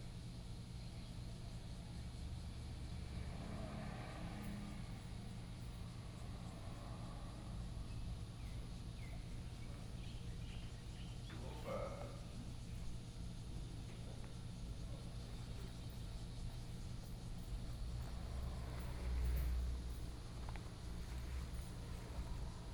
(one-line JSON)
{"title": "Luye Station, Luye Township - Quiet little station", "date": "2014-09-07 09:08:00", "description": "Quiet little station, At the station, Traffic Sound", "latitude": "22.91", "longitude": "121.14", "altitude": "137", "timezone": "Asia/Taipei"}